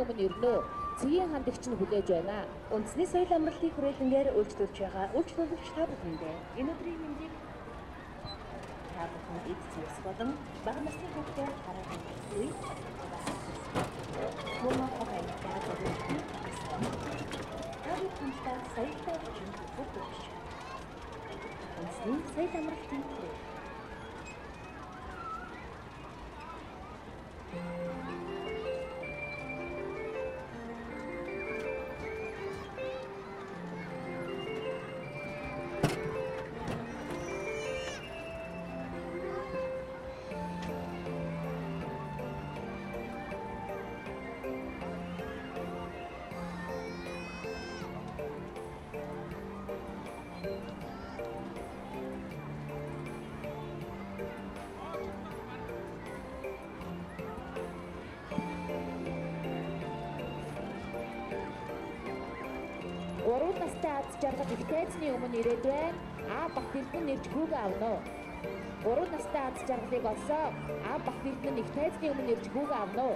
National amusement park, Ulaanbaatar, Mongolei - air bike
a walk under the air bike of the amusement park, play grounds and water games are audible too and especially the music and anouncements of the park out of loudspeakers that are camouflaged as stones - quite nice installation